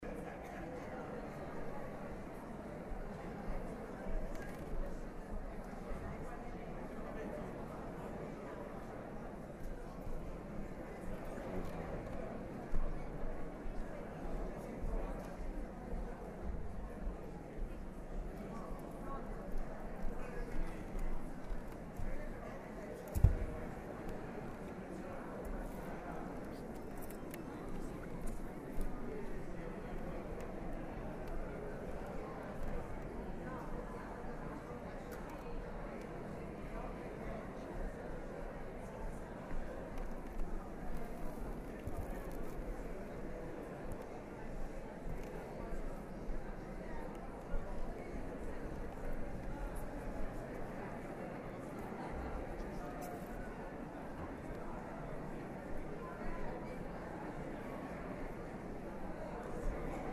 Pubblico allintervallo (edirol R09HR)
SIC, Italia